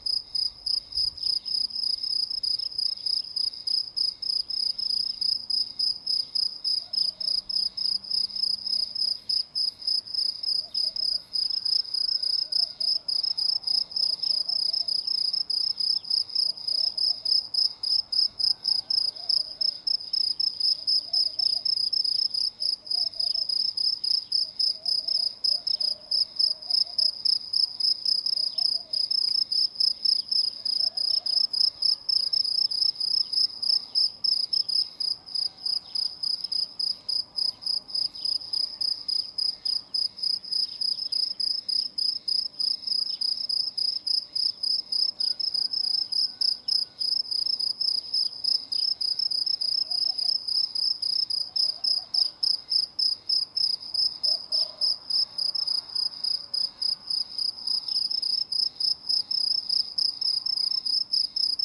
Göynük, Kanyon Yolu, Kemer/Antalya, Турция - Morning sounds on the road to Goynuk Canyon
Morning sounds on the road to Goynuk Canyon.
Recorded with Zoom H2n
Akdeniz Bölgesi, Türkiye, 12 July 2021, 06:04